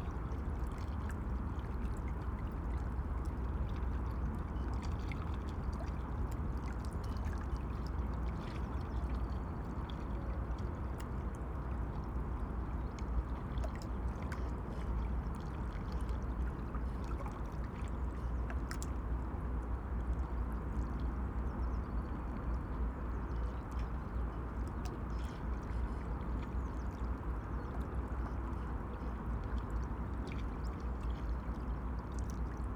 Vltava river ripples on the landing stage, U Ledáren, Praha, Czechia - Vltava ripples on the landing stage
With normal ears it is rather difficult to hear the river Vltava at Braník as traffic noise from the autobahn on the opposite bank continuously drowns out most quieter sounds including water ripples, rowing boats and kayaks. However, at some spots small waves breaking on stones at the river's edge are audible. Here the landing stage creates water eddies and gurgles that are audible. At the end the wind ruffles the microphones.